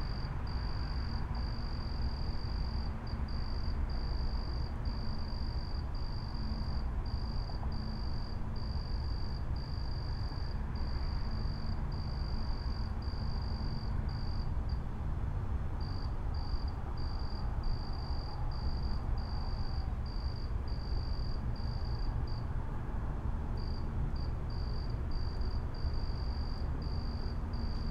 Recorded with Sound Devices 633 and Lom USIs
Travis County, Texas, United States of America, 24 March 2020